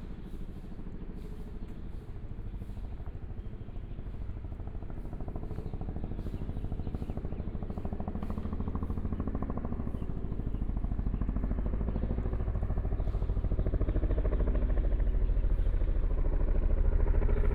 Taipei EXPO Park, Zhongshan District - Walking through the Park
Walking through the Park, Helicopter flight traveling through, Traffic Sound, Binaural recordings, Zoom H4n + Soundman OKM II